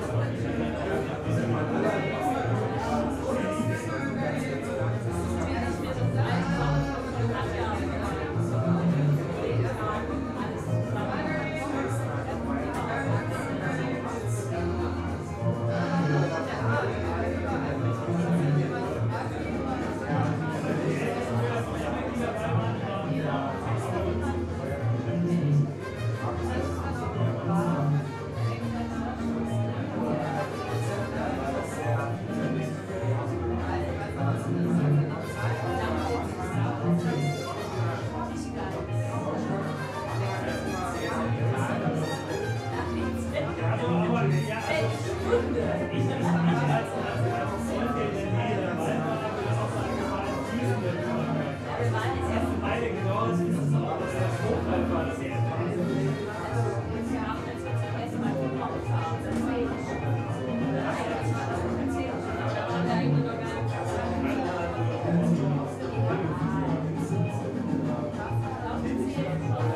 berlin, sonnenallee: o tannenbaum - the city, the country & me: bar, project room 'o tannenbaum'
the city, the country & me: february 11, 2010
11 February, 00:13